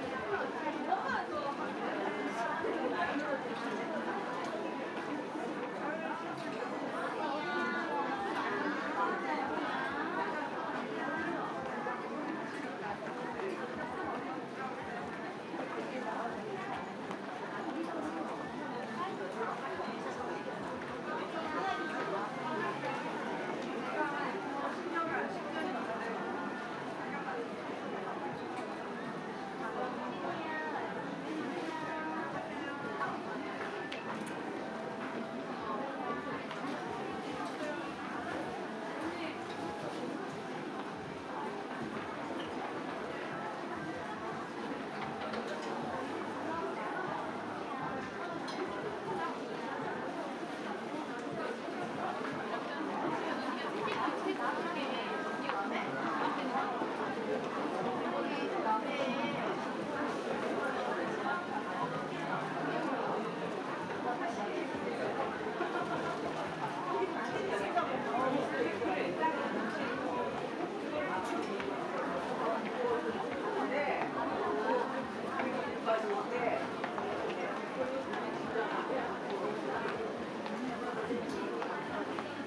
Seoul Express Bus Terminal, Underground Shopping Arcade, Bicycle Horn
서울고속버스터미널 지하상가, 낮시간, 자전거 빵빵
9 September 2019, ~13:00